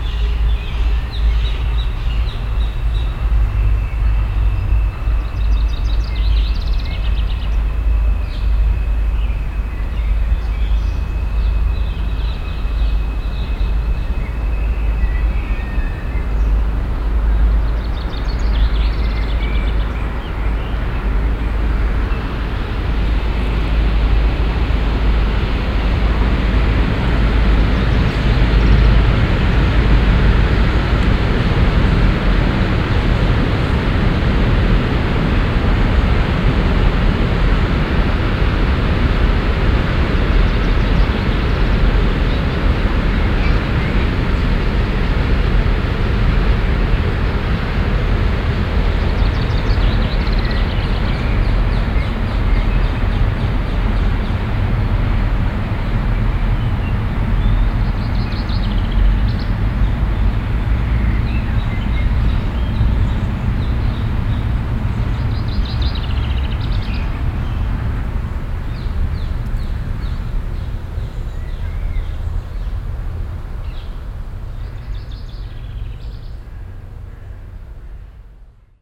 cologne, stadtgarten, unter zwei hainbuchen
unter zwei hainbuchen obere, mittlere wiese - stereofeldaufnahmen im juni 08 - nachmittags
project: klang raum garten/ sound in public spaces - in & outdoor nearfield recordings